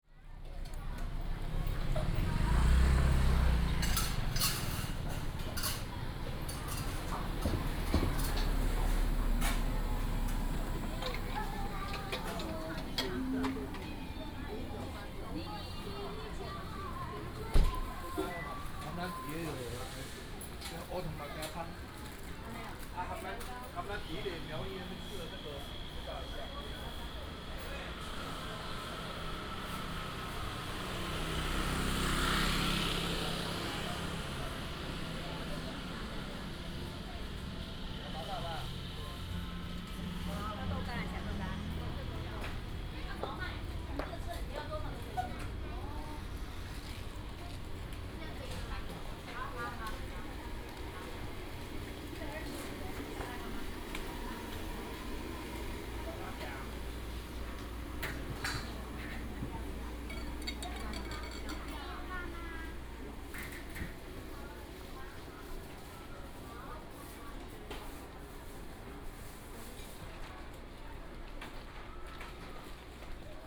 {
  "title": "Neiwan, Hengshan Township - Shopping Street",
  "date": "2017-01-17 12:51:00",
  "description": "Sightseeing Street\nBinaural recordings\nSony PCM D100+ Soundman OKM II",
  "latitude": "24.70",
  "longitude": "121.18",
  "altitude": "259",
  "timezone": "Asia/Taipei"
}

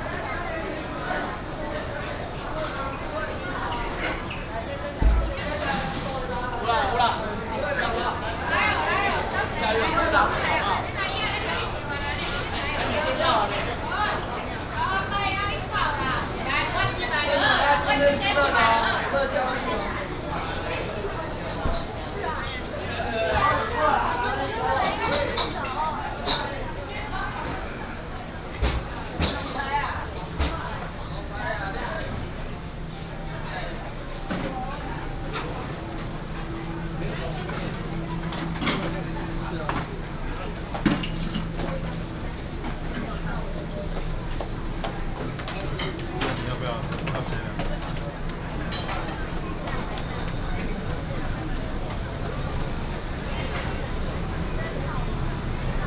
{
  "title": "Ren 3rd Rd., Ren’ai Dist., Keelung City - walking in the night market",
  "date": "2016-07-16 19:04:00",
  "description": "Various shops sound, walking in the Street, night market",
  "latitude": "25.13",
  "longitude": "121.74",
  "altitude": "13",
  "timezone": "Asia/Taipei"
}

